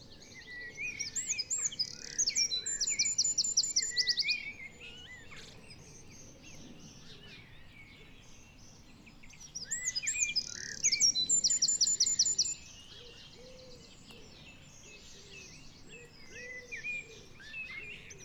Dartington, Devon, UK - soundcamp2015dartington wren